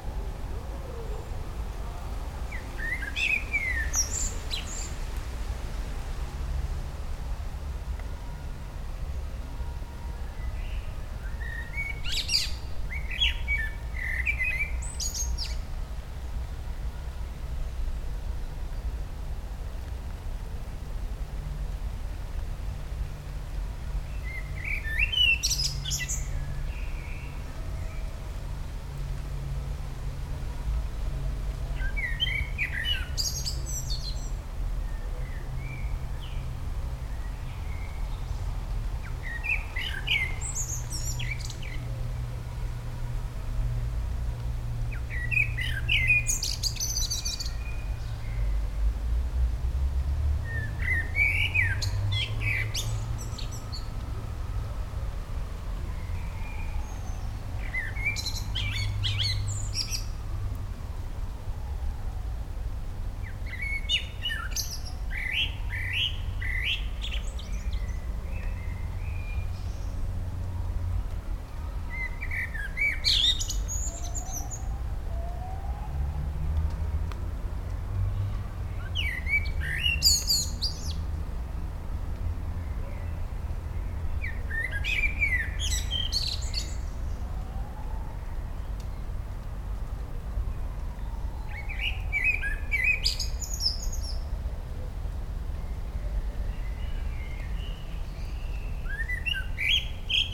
Ixelles, Belgium - Blackbird and rain
Tucked away behind some quite busy streets in Brussels is this beautiful park. You can still hear the sounds of sirens drifting in over the walls, and the bassy, low frequencies of nearby and dense traffic... but the trees really provide a nice buffer from the noise of the city, and create lovely cavernous spaces which the Blackbird uses to amplify its wonderful song. This time mixed with rain, too. EDIROL R-09 recorder was used, with a map held above it as improvised recorder umbrella.